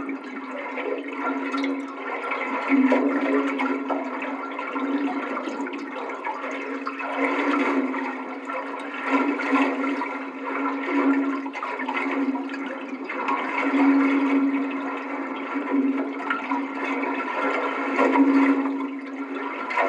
Christian Renewal Centre, Shore Rd, Rostrevor, Newry, UK - High Tide Stairs to the Sea
Recorded with a stereo pair of JrF contact mics taped to metal sea stairs into a Sound Devices MixPre-3.